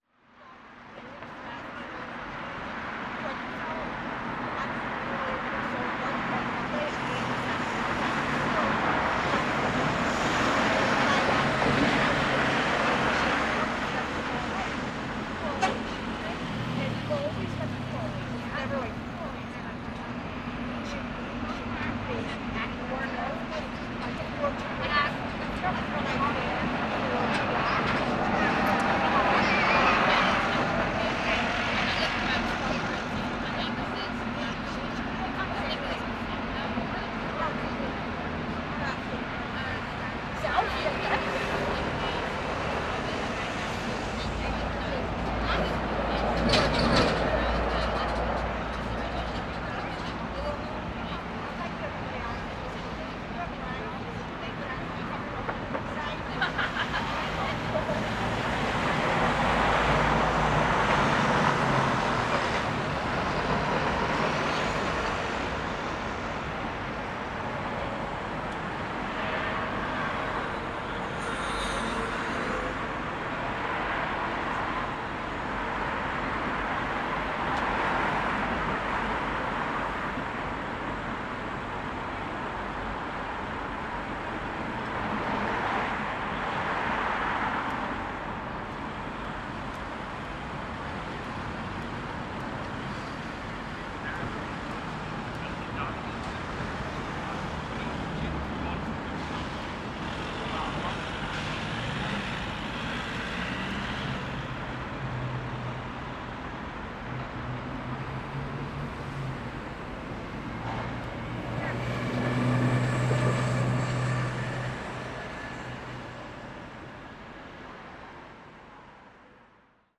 Belfast, UK - Belfast City Hall
Recording of pedestrians and little amount of vehicle traffic in the area. This is five days after the new Lockdown 2 in Belfast started.
21 October 2020, 19:42